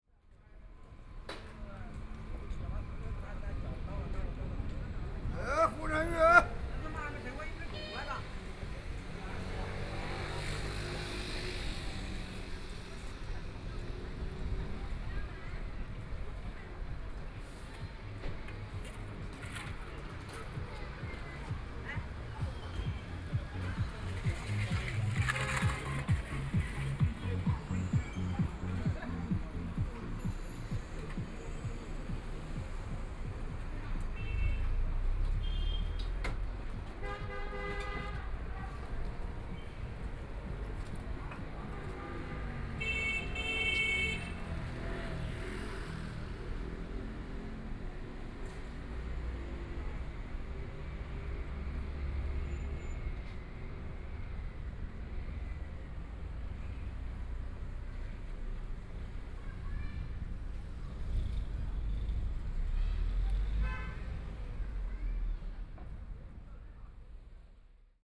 Walking in the small streets, Binaural recordings, Zoom H6+ Soundman OKM II
Huangpu, Shanghai, China